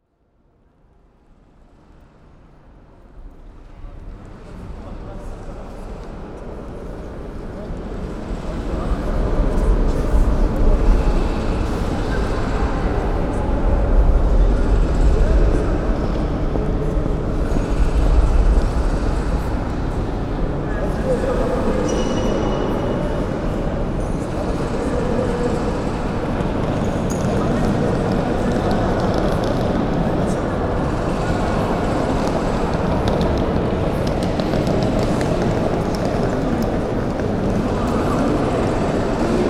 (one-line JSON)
{"title": "cathedrale notre dame, rouen", "date": "2011-11-27 14:10:00", "description": "inside the cathedrale notre dame de rouen on a sunday afternoon", "latitude": "49.44", "longitude": "1.09", "altitude": "20", "timezone": "Europe/Paris"}